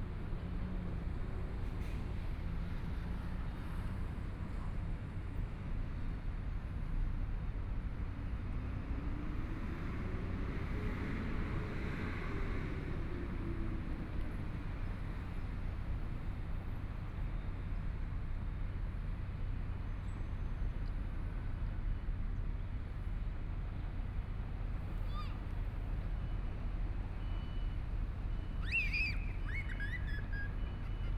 中山區成功里, Taipei City - In the square outside the station
In the square outside the station, Traffic Sound
Binaural recordings
Zoom H4n+ Soundman OKM II
Taipei City, Taiwan